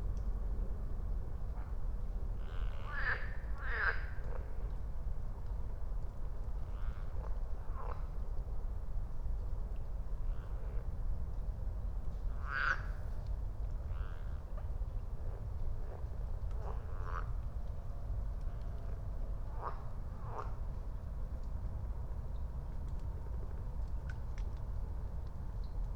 00:04 Berlin, Königsheide, Teich - pond ambience
Deutschland, 6 June 2021